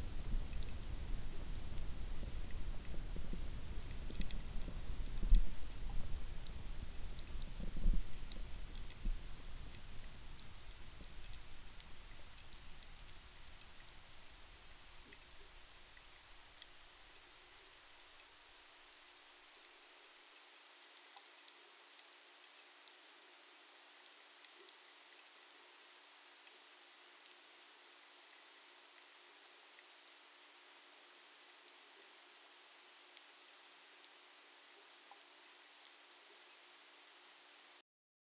막걸리 만들기 발효 과정 (시작 48시 후에) fermentation of rice wine (after 48ho
막걸리 만들기 발효 과정_(시작 48시 후에) fermentation of rice wine (after 48hours))
강원도, 대한민국, 19 November